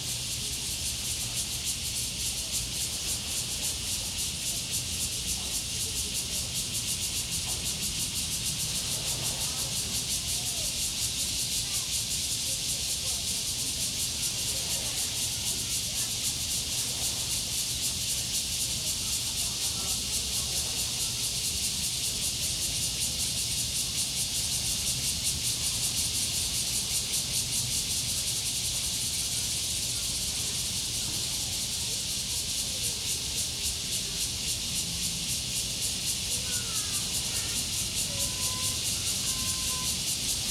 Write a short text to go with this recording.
Hot Weather, Cicadas cry, Zoom H2n MS+XY